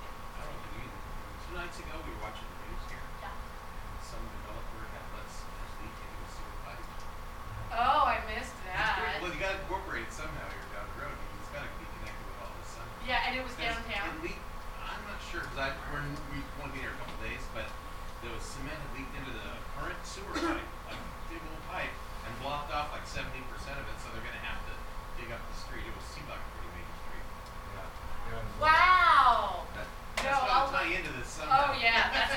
Occidental Ave. S, Seattle, WA, USA - Street Headaches (Underground Tour 2)
Just east of former butcher shop. Following a historical overview of street-level reconfiguration, tourist relates recent news story about cement poured into sewer pipe. A nearby compressor pumps out water. "Bill Speidel's Underground Tour" with tour guide Patti A. Stereo mic (Audio-Technica, AT-822), recorded via Sony MD (MZ-NF810).